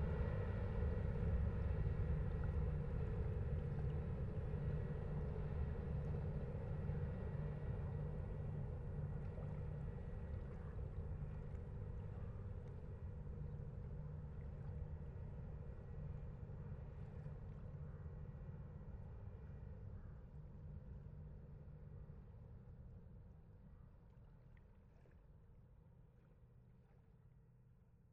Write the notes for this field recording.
Recording of Sahurs - La Bouille ferry, charging cars in aim to go to La Bouille, from the Seine bank.